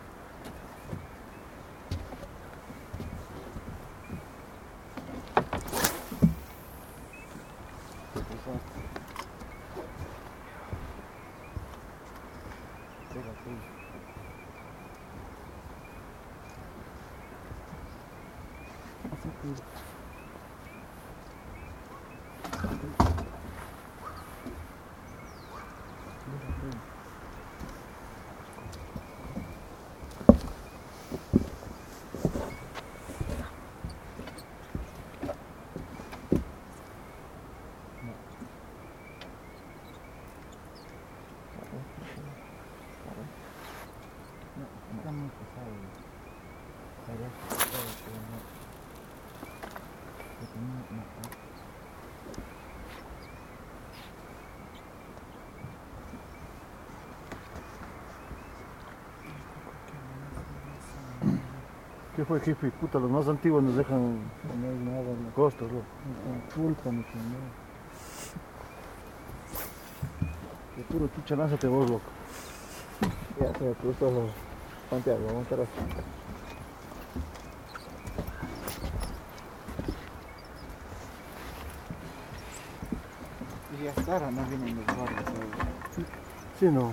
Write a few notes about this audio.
El Cajas national park, Llaviucu Lake. Returning from the river west from the lake, I met a family of fishermen which were staying at the dock for the night. Recorded with TASCAM DR100 built-in mics and a homemade windshield.